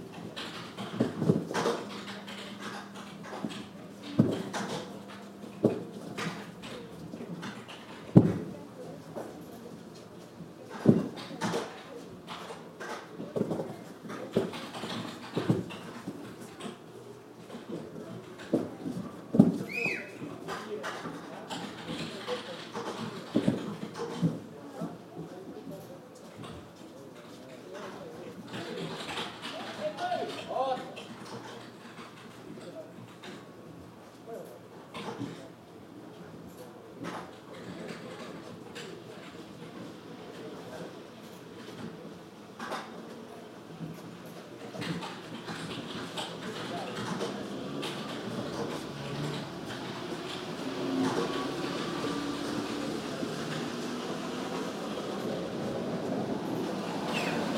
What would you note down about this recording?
Soundscape of an office windowsill. Shovellers of snow work on the roof to get it down before it falls down on someone. A man down on the street whistles when a pedestrian is passing by and the shovellers get a break. The work in the office goes on simultaneously.